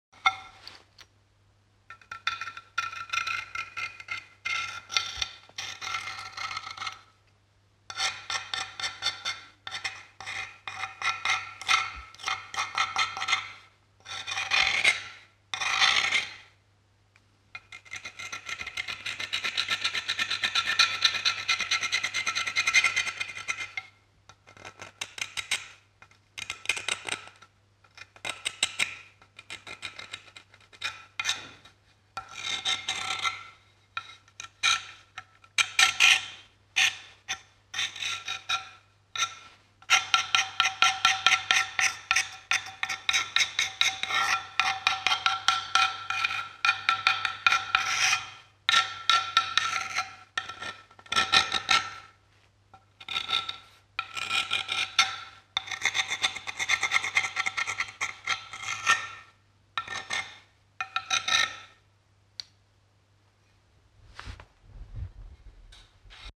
erkrath, neandertal, altes museum, steinzeitwerkstatt - steinzeitwerkstatt - schiefer und feuerstein
klänge in der steinzeitwerkstatt des museums neandertal - hier: beschriftung von schiefer mit feuerstein
soundmap nrw: social ambiences/ listen to the people - in & outdoor nearfield recordings, listen to the people